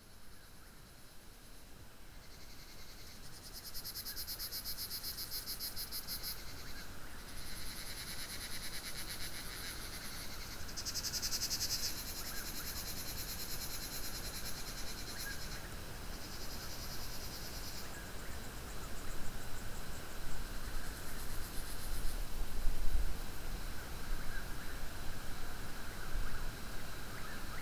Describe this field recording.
Pedernales: My favorite primitive camping spot and site of MANY good times. Wind, leaves, cicadas, frogs, Whippoorwills. other bugs.. Tascam DR100 MK-2 internal cardiods